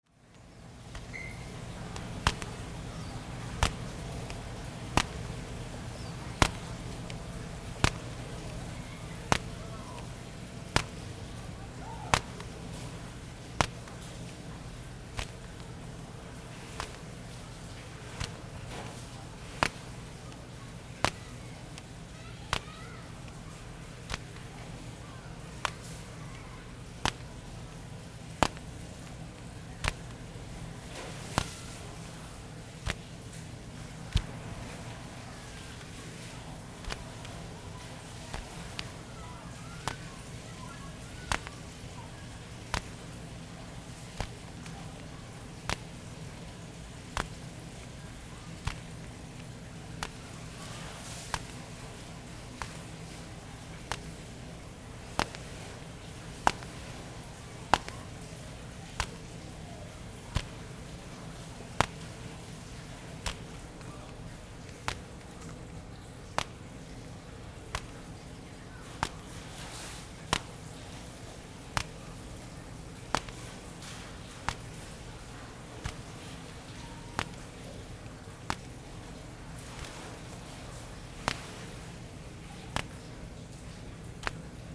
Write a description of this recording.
The wind and the sound of plastic sheeting, Kitchen sounds, Zoom H6